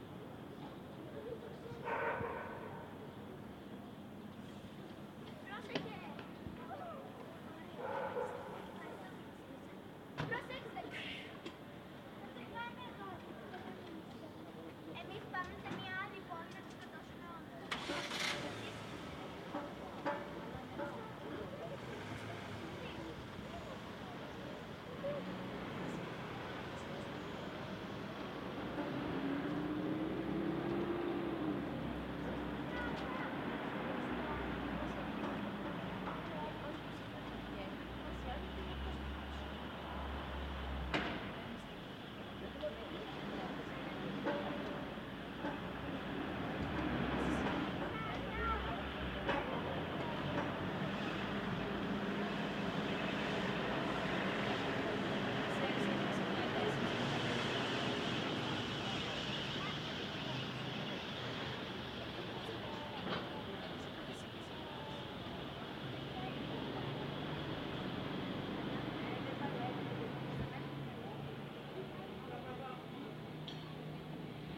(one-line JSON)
{"title": "Volos, Greece - Metamorphosis Square in the afternoon", "date": "2016-01-13 17:06:00", "description": "Recordings of sounds on the ground floor from a 5th floor balcony. We can hear sounds from the street, a family in a park and noises from a small construction site across from them.", "latitude": "39.36", "longitude": "22.94", "altitude": "8", "timezone": "Europe/Athens"}